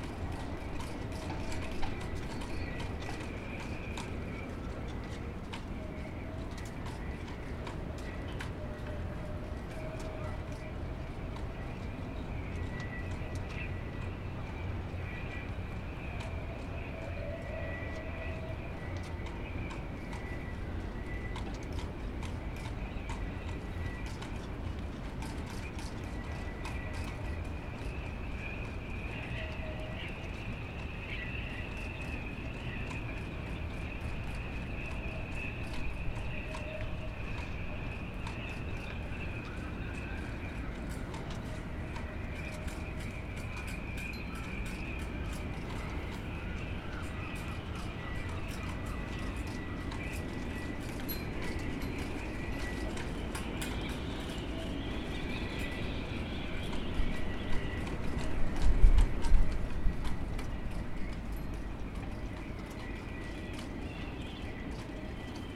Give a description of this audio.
Nautical basin, shipbuilding with the wind - recorded with ZoomH4